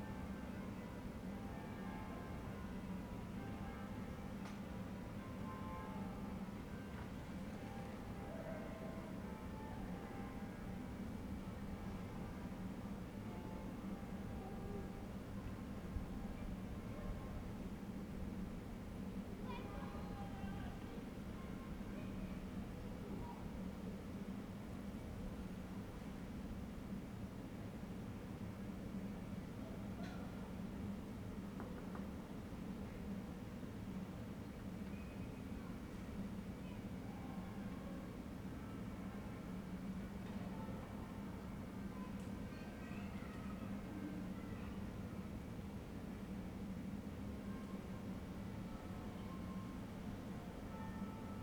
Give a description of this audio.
Chapter VIII of Ascolto il tuo cuore, città. I listen to your heart, city, Saturday March 14th 2020. Fixed position on an internal terrace at San Salvario district Turin, four days after emergency disposition due to the epidemic of COVID19. Start at 6:35 p.m. end at 7:25 p.m. duration of recording 50'30''